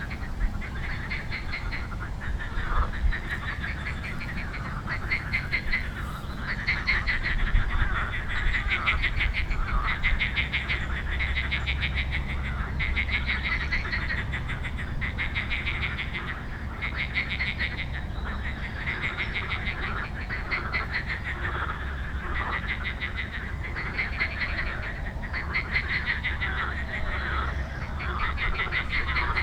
Liben Docks flooded - Frogs feast
During the flood the voices of frogs where reaching the bridge..
Česko, European Union, 5 June 2013